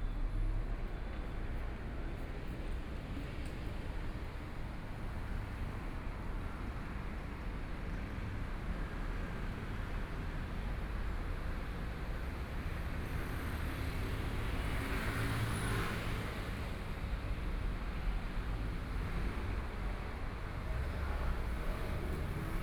Linsen N. Rd., Zhongshan Dist. - walking on the Road
Walking on the road （ Linsen N. Rd.）, Traffic Sound, Binaural recordings, Zoom H4n + Soundman OKM II